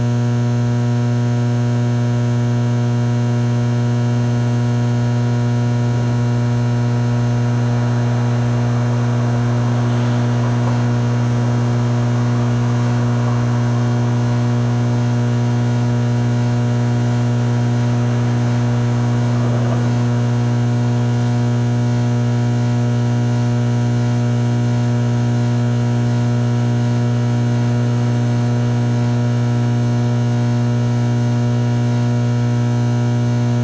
Ventilation System, Depew Place.
United States, 20 January 2022, ~23:00